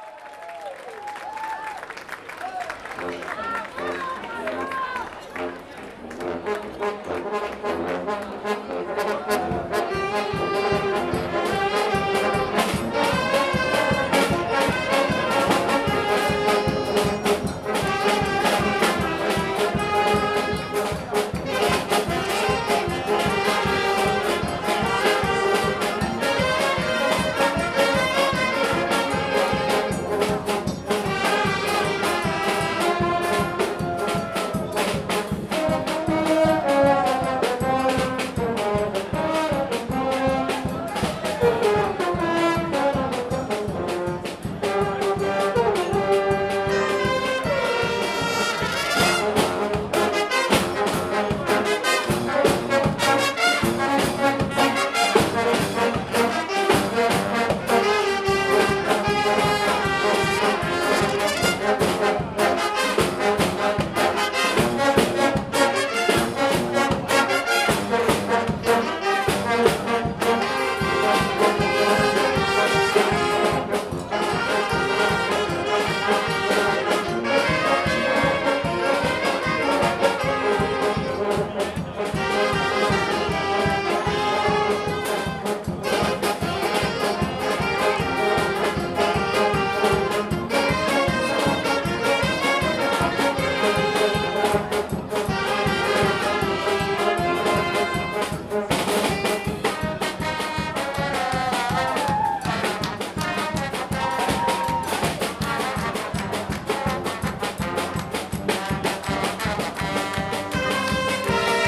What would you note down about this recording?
Next to the Capucins market place, two medecine students fanfares, from Reims and Bordeaux, gathered to play more than one hour and brightened up this cloudy day. [Tech.info], Recorder : Tascam DR 40, Microphone : internal (stereo), Edited on : REAPER 4.611